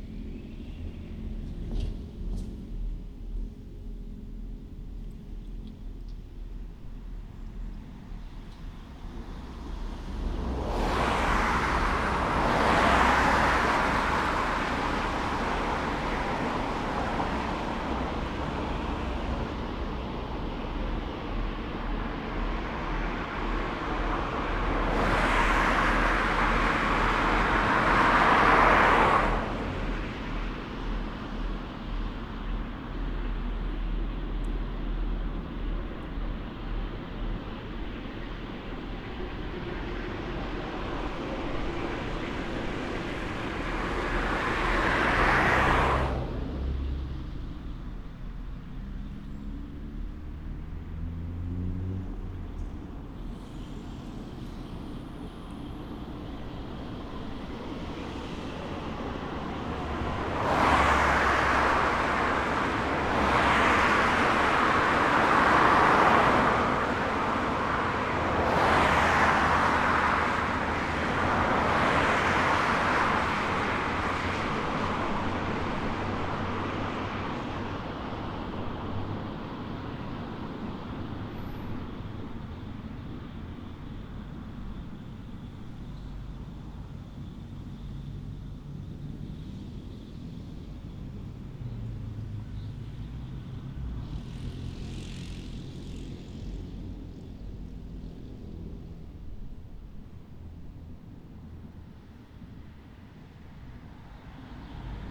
{
  "title": "Pedestrian bridge over national road, Rovaniemi, Finland - Vehicles on national road 4",
  "date": "2020-06-18 22:48:00",
  "description": "Vehicles going in and out of the tunnel under the shopping centre in central Rovaniemi. Zoom H5 with default X/Y module.",
  "latitude": "66.50",
  "longitude": "25.72",
  "altitude": "84",
  "timezone": "Europe/Helsinki"
}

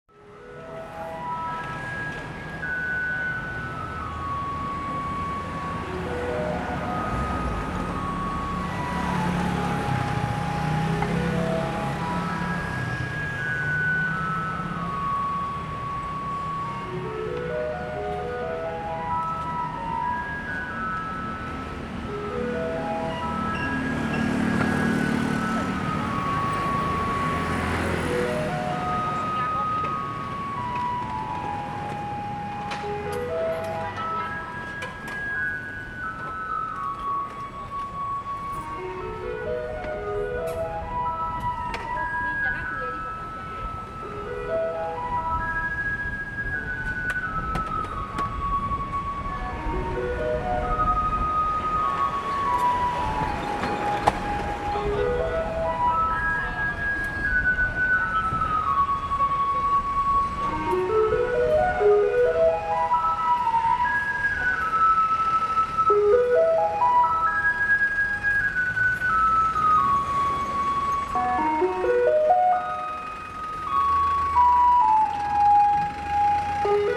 {
  "title": "Ln., Yongheng Rd., Yonghe Dist., New Taipei City - Garbage truck coming",
  "date": "2012-03-19 13:30:00",
  "description": "Garbage truck coming, Sony ECM-MS907, Sony Hi-MD MZ-RH1",
  "latitude": "25.00",
  "longitude": "121.53",
  "altitude": "20",
  "timezone": "Asia/Taipei"
}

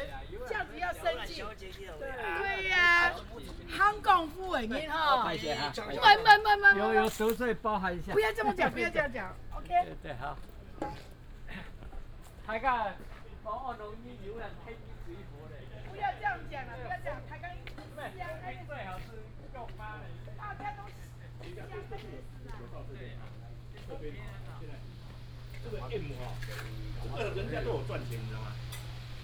{
  "title": "金山面公園, Hsinchu City - Walking in the park",
  "date": "2017-09-27 14:48:00",
  "description": "Walking in the park, Binaural recordings, Sony PCM D100+ Soundman OKM II",
  "latitude": "24.78",
  "longitude": "121.02",
  "altitude": "92",
  "timezone": "Asia/Taipei"
}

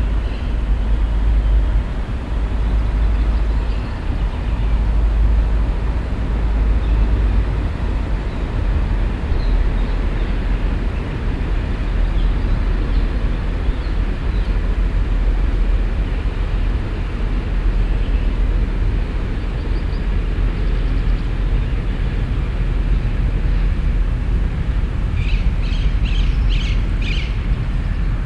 {
  "title": "cologne, stadtgarten, mittlerer weg, parkbank",
  "date": "2008-05-07 21:25:00",
  "description": "stereofeldaufnahmen im mai 08 - mittags\nproject: klang raum garten/ sound in public spaces - in & outdoor nearfield recordings",
  "latitude": "50.94",
  "longitude": "6.94",
  "altitude": "52",
  "timezone": "Europe/Berlin"
}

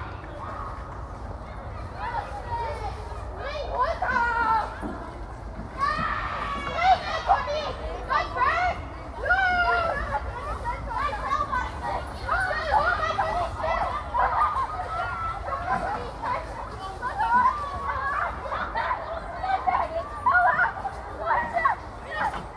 {
  "title": "After school playground",
  "date": "2011-11-01 15:34:00",
  "description": "Kids playing after school is one of Berlins most characteristic sounds.",
  "latitude": "52.55",
  "longitude": "13.58",
  "altitude": "58",
  "timezone": "Europe/Berlin"
}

